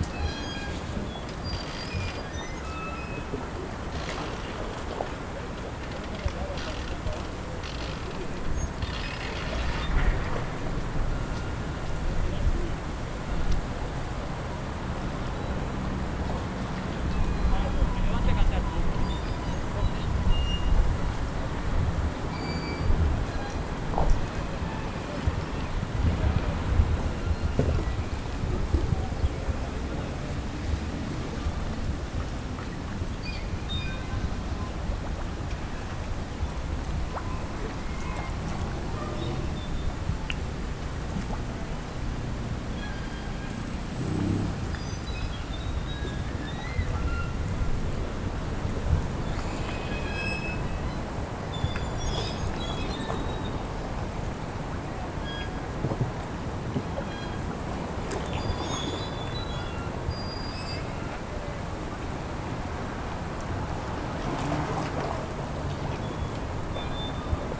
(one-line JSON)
{"title": "Paseo de Isaac Peral, Águilas, Murcia, España - Aguilas Port", "date": "2021-02-27 13:19:00", "description": "A soundscape about the pandemic public expresion of people, we still wearing masks. The sound of the little blops of the water arround the parked boats, is a beautifull sound for being interpreted with flutes.", "latitude": "37.40", "longitude": "-1.58", "altitude": "6", "timezone": "Europe/Madrid"}